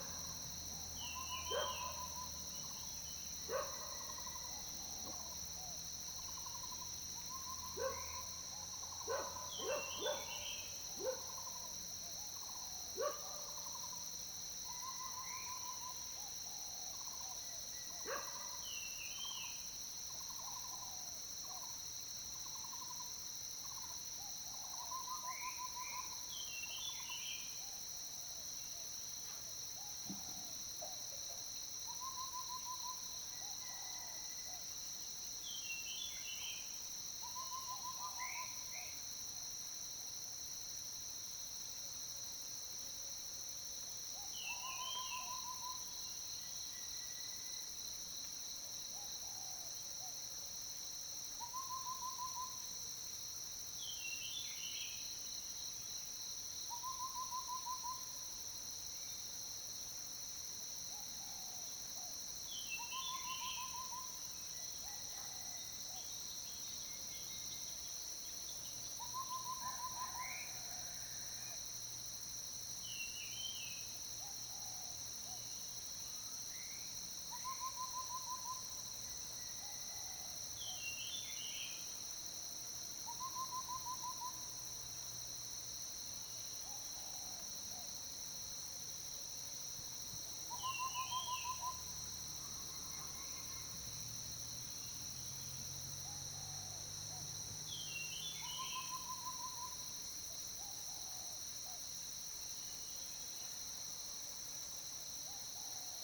中路坑生態園區, Puli Township - Bird calls
in the morning, Bird calls, Dogs barking, Insect sounds
Zoom H2n MS+XY
Nantou County, Taiwan